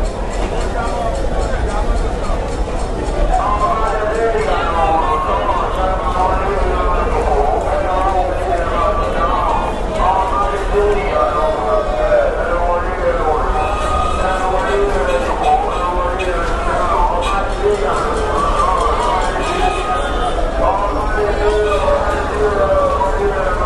Oshodi P.A system (Lagos Soundscape)